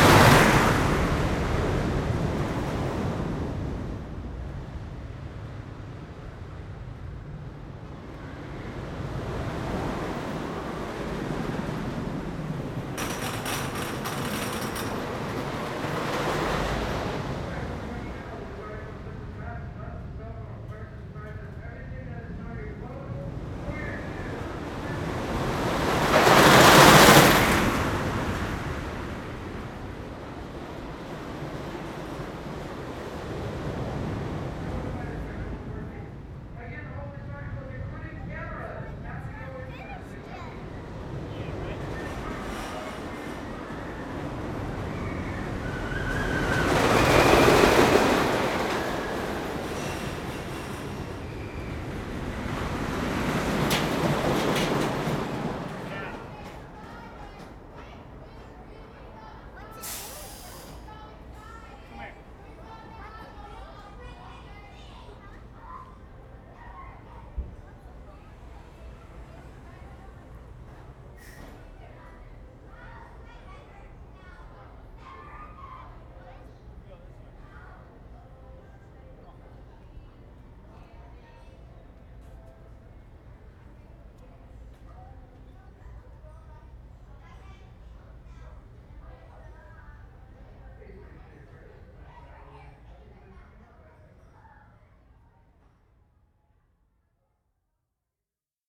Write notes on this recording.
*Binaural Recording* Amusement park, Roller coaster, people screaming, Harley Davidson, motorcycle. CA-14 omnis > DR100 MK2